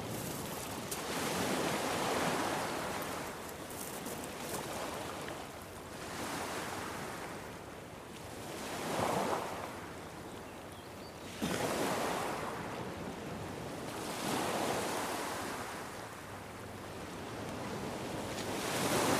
Niaqornat, Grønland - Waves of the eastern beach
The waves of the eastern rocky beach of Niaqornat on a moderately windy day. Recorded with a Zoom Q3HD with Dead Kitten wind shield.